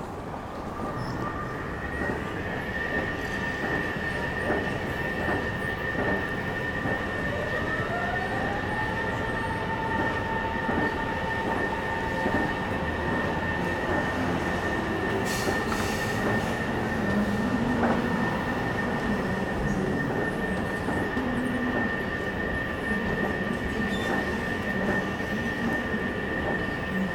berlin südkreuz, elevators and escalators - escalator 1st floor
recorded some transportation devices while waiting for a train arrival.